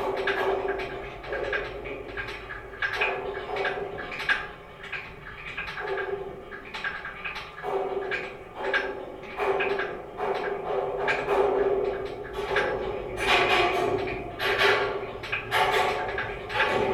guide wires of the small pedestrian suspension bridge near the park
AB, Canada, 21 April 2010